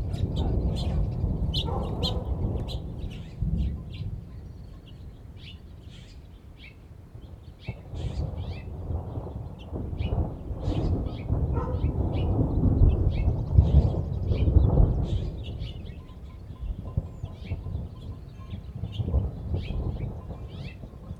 Las Narices, Coyotillos - Thunders and sheperd in the mountain Las Narices
At the mountain Las Narices close to Coyotillos, storm is arriving and some thunders are clapping, before the rain. The sheperd and their goats are coming down, and Luz is coming to me at the end to have a chat. Some dogs are barking and coming down with the goats.
Recorded by a ORTF setup with 2 Schoeps CCM4 Microphones in a Cinela Windshield. On a Sound Devices 633 recorder
Sound Ref MXF190617T10
GPS 23.315748 -101.184082
Recorded during the project "Desert's Light" by Félix Blume & Pierre Costard in June 2019